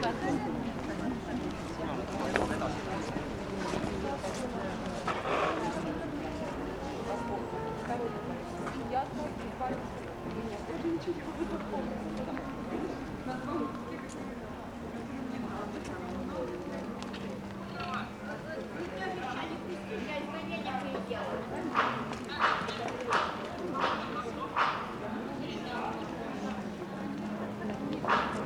Tallinn, Lai 18
Quiet talks in a crowd when people, about a hundred of them, queue up at Lai street outside the Consulate of the Russian embasy. Door opens, one goes out, one goes in. Cars and segways are passing by, construction workers and music from an art galery complete the soundscape.
Tallinn, Estonia, 19 April 2011